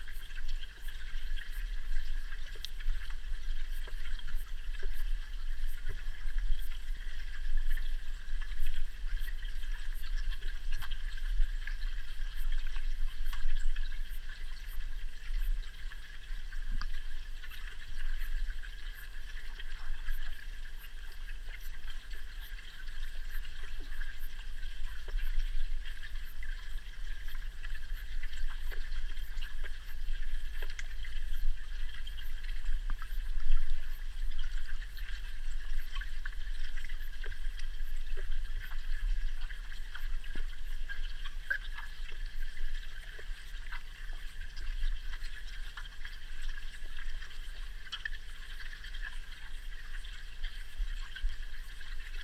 Utena, Lithuania, underwater
hydrophone in the city's lake. some low noise from the traffick, some motor noise from the water pump, some water insects and underwater flows
18 July 2015